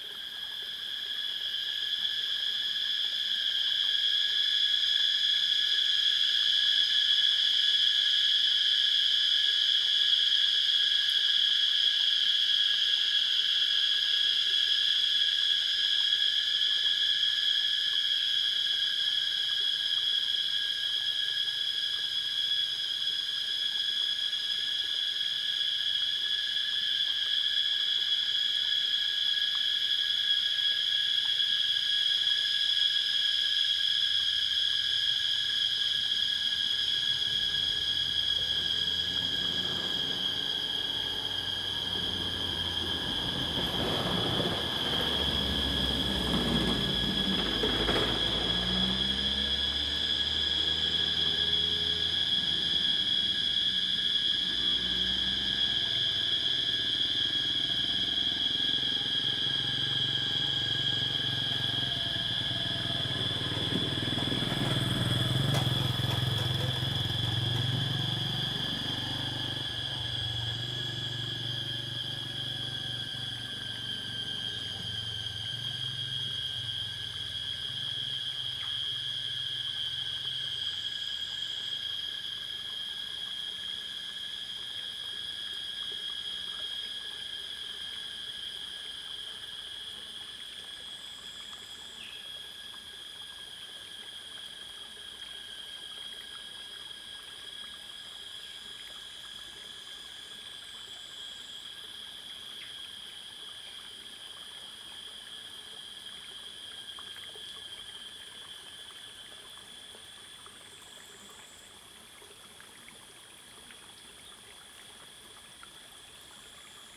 {"title": "Hualong Ln., 五城村 Yuchi Township - In the woods", "date": "2016-05-05 15:01:00", "description": "Small streams, Cicada sounds, Bird sounds\nZoom H2n MS+XY", "latitude": "23.92", "longitude": "120.88", "altitude": "750", "timezone": "Asia/Taipei"}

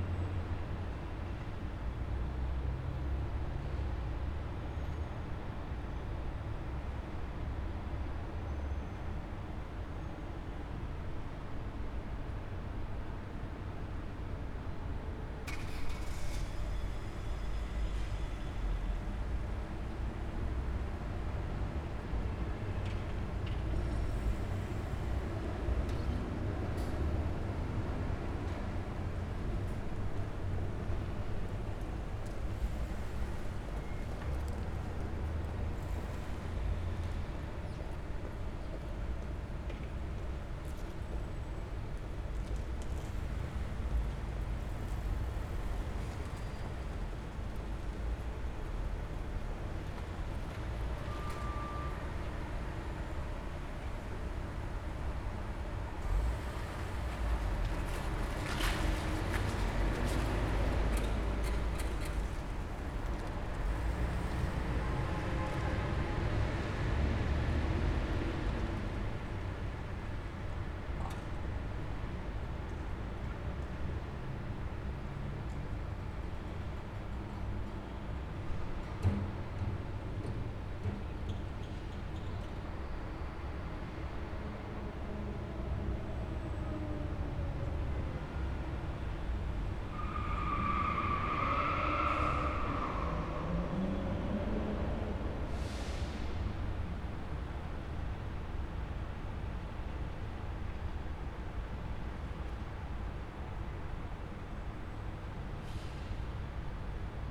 {"title": "Wroclaw, Cinema Hostel, backstreet parking lot", "date": "2010-09-02 09:02:00", "description": "taken form a window facing backyard of the building, parking lot and some construction", "latitude": "51.11", "longitude": "17.03", "timezone": "Europe/Warsaw"}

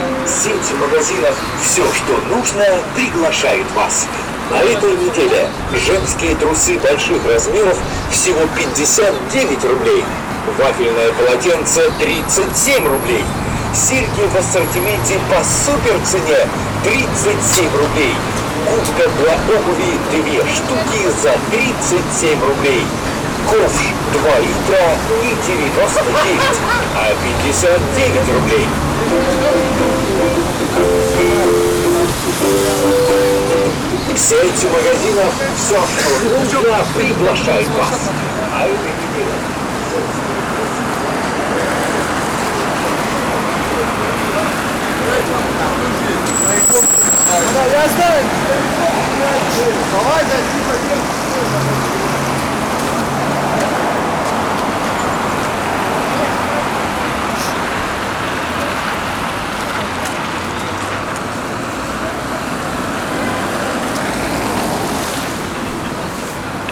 {"title": "Лиговский пр., Санкт-Петербург, Россия - street noises and weird voice ads", "date": "2018-03-02 15:56:00", "description": "street noises and weird voice advertisements from the nearby shops\nугол Невского и Лиговского проспекта, голосовая реклама магазинов на углу", "latitude": "59.93", "longitude": "30.36", "altitude": "20", "timezone": "Europe/Moscow"}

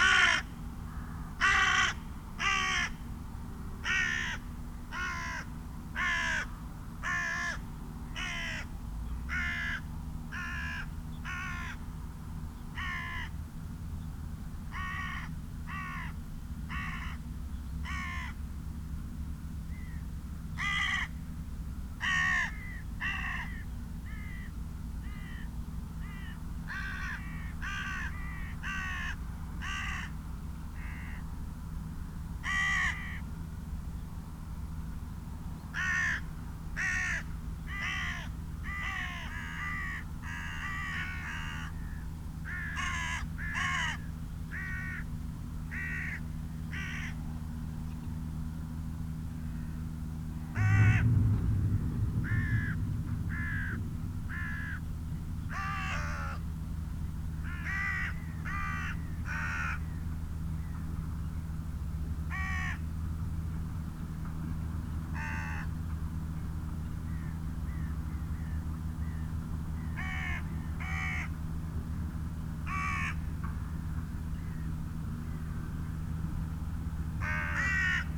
{"title": "Luttons, UK - Ploughing ... with corvids ...", "date": "2016-11-29 08:30:00", "description": "Ploughing ... with bird calls from rook ... carrion crow ... corn bunting ... pheasant ... open lavalier mics clipped to hedgerow ... there had been a peregrine around earlier so the birds may have still been agitated ...", "latitude": "54.12", "longitude": "-0.56", "altitude": "92", "timezone": "GMT+1"}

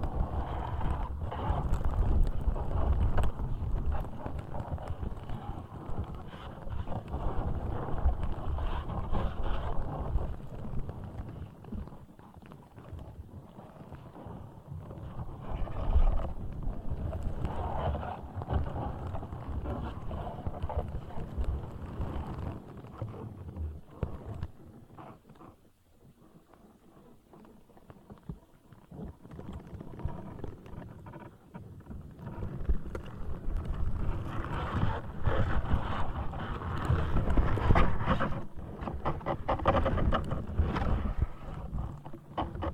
{"title": "Vyžuonos, Lithuania, reed's roots", "date": "2022-08-20 15:30:00", "description": "mild wind. diy \"stick\" contact microphone sticked into ground right at reed roots.", "latitude": "55.59", "longitude": "25.51", "altitude": "109", "timezone": "Europe/Vilnius"}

